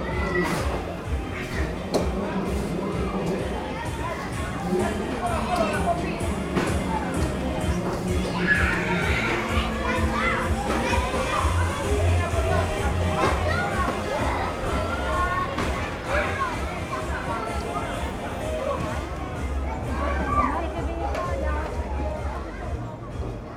Court-St.-Étienne, Belgique - Fun fair
During the annual feast of Court-St-Etienne, there's a huge fun fair installed of the heart of the city. All is very hard to bear !!! There's so much noise of horrible conterfeit things... Recording begins with the all peruvian people selling fake commodities from China (here a small dog, a bird, and a slide with penguins). After, you dive in the horror film : carousel with horrible plastic music. The end is a merry go round for small children. All these sounds take part of a subculture, the fair ground ambience.